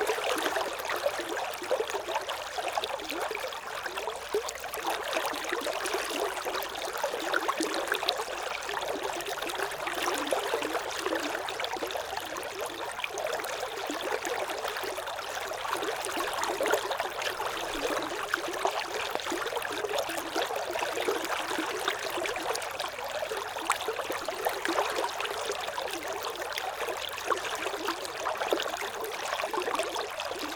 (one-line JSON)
{"title": "Mont-Saint-Guibert, Belgique - The river Orne", "date": "2016-04-10 15:50:00", "description": "Recording of the river Orne, in a pastoral scenery.\nAudioatalia binaural microphone used grouped and focused on the water.", "latitude": "50.63", "longitude": "4.63", "altitude": "99", "timezone": "Europe/Brussels"}